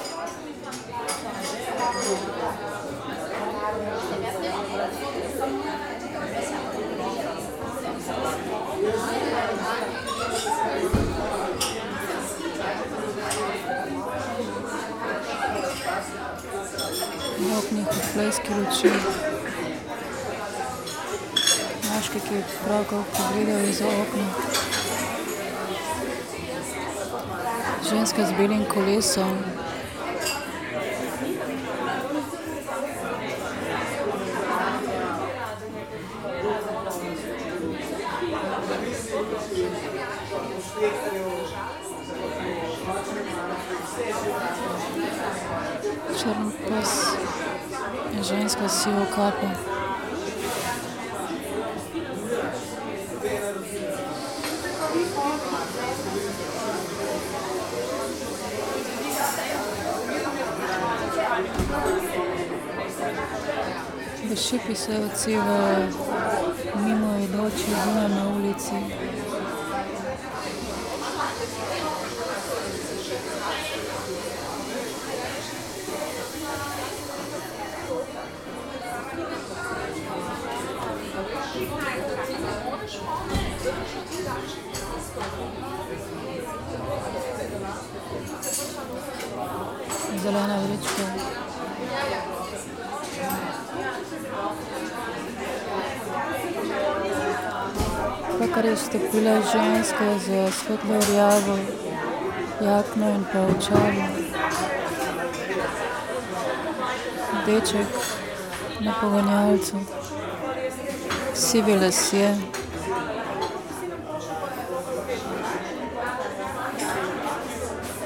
cafe cafetino, old town, Ljubljana - streams ... passers by, radio, words, reflections, times
sitting by the window, looking outside, inner and outer through words, voices, short radio with my phone and radio aporee
Ljubljana, Slovenia